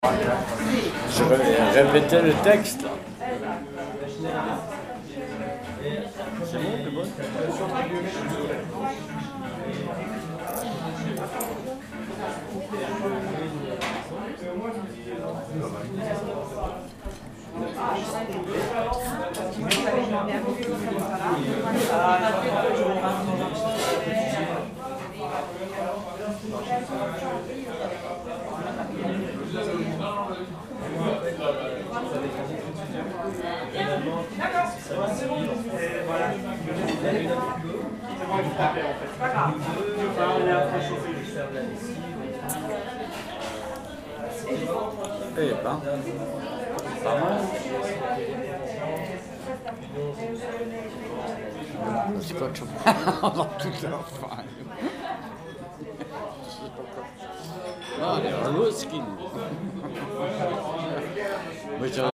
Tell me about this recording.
Kebab Restaurant - Strasbourg, Esplanade - Enregistrement Zoom H4N